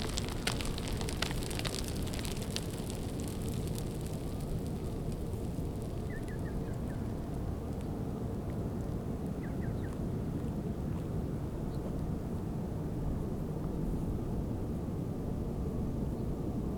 Horní Jiřetín, Czechia - avalanche
sesouvajici se kaminky na hrane lomu